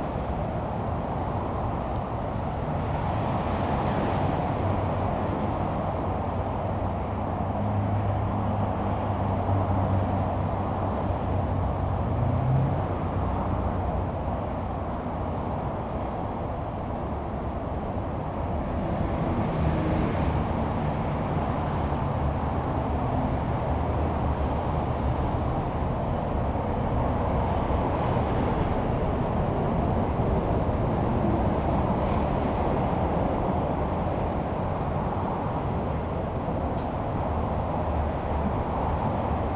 Peiying Rd., Zhongli Dist. - Next to the highway
Next to the highway, traffic sound
Zoom H2n MS+XY
Zhongli District, Taoyuan City, Taiwan